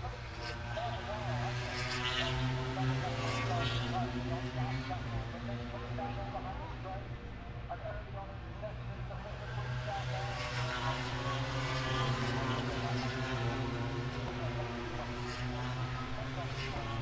Silverstone Circuit, Towcester, UK - british motorcycle grand prix ... 2021
moto grand prix free practice two ... maggotts ... dpa 4060s to MixPre3 ...
27 August 2021, ~14:00